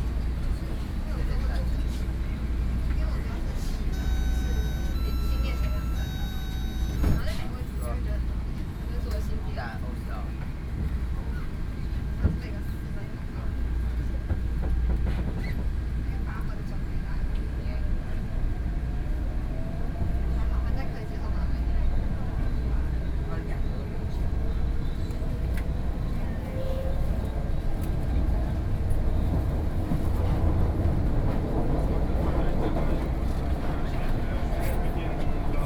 Beitou - inside the Trains
MRT Train, Sony PCM D50 + Soundman OKM II
Beitou District, 西安街二段195號